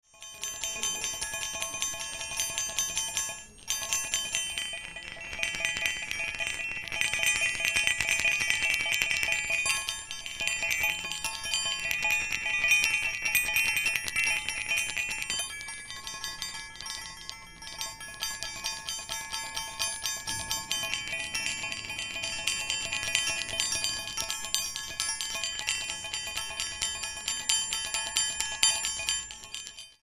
bonifazius, bürknerstr. - Qi-Gong-Kugeln
17.12.2008 20:15, kleine chinesische qi gong klangkugeln / little chinsese qi gong balls